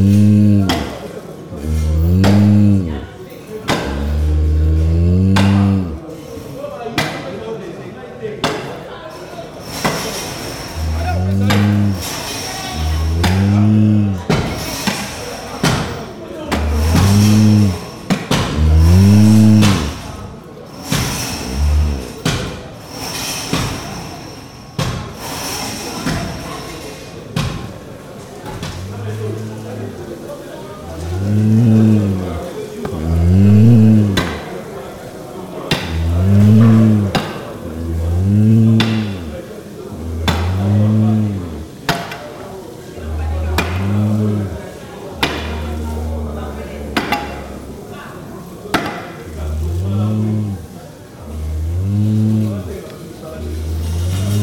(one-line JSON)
{"title": "Kinshasa, RDC - The last breath of a cow (Kinshasa, Congo)", "date": "2018-05-16 10:00:00", "description": "The last breath of a cow in a slaughterhouse of Kinshasa.\nRecorded by a MS setup Schoeps CCM41+CCM8 on a 633 Sound Devices Recorder\nMay 2018, Kinshasa, RDC\nGPS: -4.372435 / 15.359457", "latitude": "-4.37", "longitude": "15.36", "altitude": "276", "timezone": "Africa/Kinshasa"}